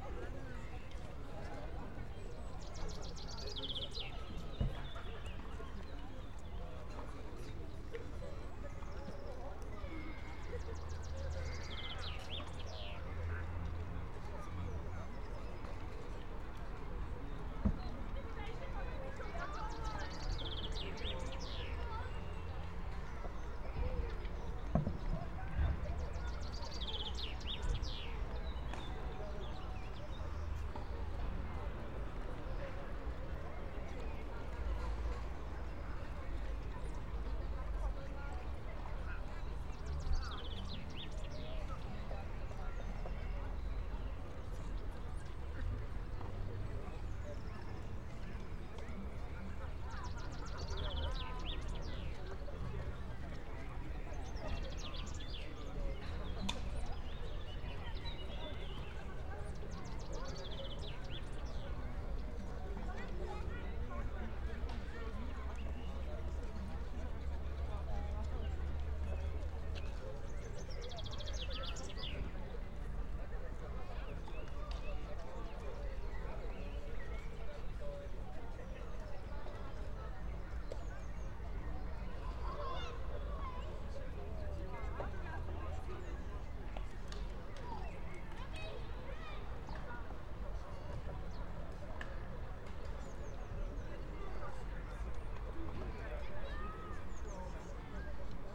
15:25 Brno, Lužánky
(remote microphone: AOM5024/ IQAudio/ RasPi2)